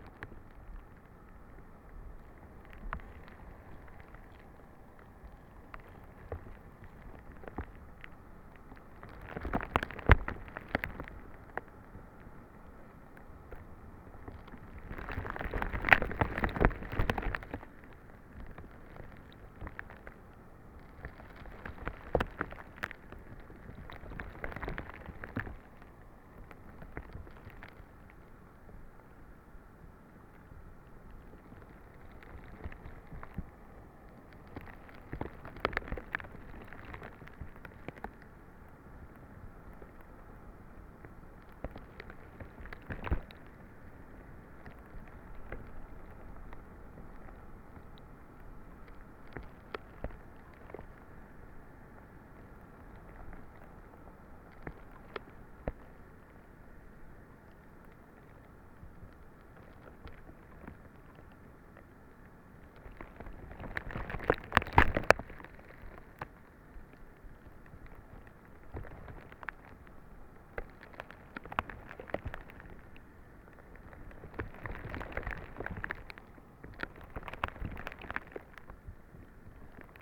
lake Alausas, Lithuania, ice
hydrophone between the broken ice on the lake